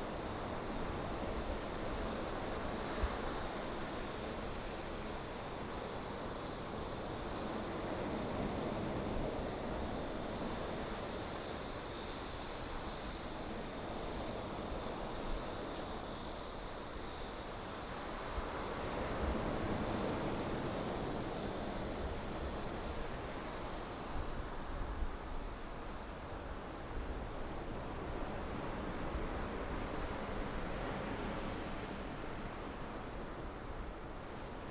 壯圍鄉東港村, Yilan County - In the beach
In the beach, Sound of the waves
Zoom H6 MS+ Rode NT4
26 July 2014, ~13:00